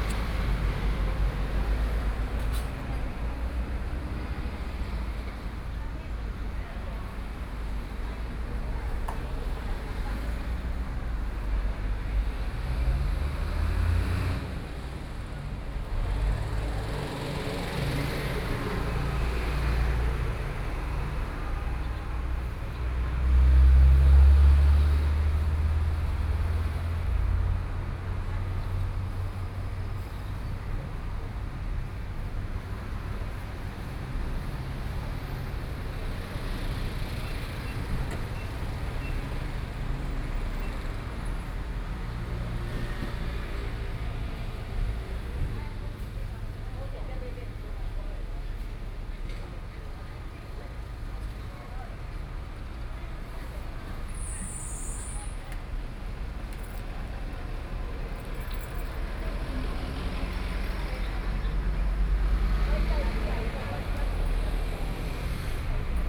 Zhongxin Rd., Luye Township - Next to the Market
Next to the Market, Traffic Sound, Small villages